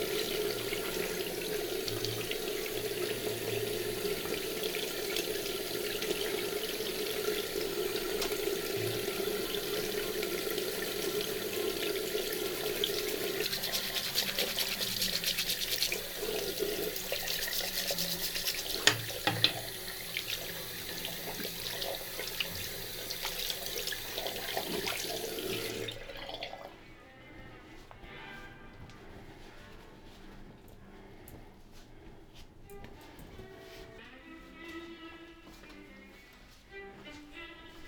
Ascolto il tuo cuore, città. I listen to your heart, city. Chapter X - Valentino Park at sunset soundwalk and soundscape 14 months later in the time of COVID19: soundwalk & soundscape
"Valentino Park at sunset soundwalk and soundscape 14 months later in the time of COVID19": soundwalk & soundscape
Chapter CLXXI of Ascolto il tuo cuore, città. I listen to your heart, city
Friday, May 7th, 2021. San Salvario district Turin, to Valentino park and back, one year and fifty-eight days after emergency disposition due to the epidemic of COVID19.
Start at 8:16 p.m. end at 9:08 p.m. duration of recording 51’38”
Walking to a bench on the riverside where I stayed for about 10’, from 6:35 to 6:45 waiting for sunset at 8:41.
The entire path is associated with a synchronized GPS track recorded in the (kmz, kml, gpx) files downloadable here:
7 May 2021, 20:16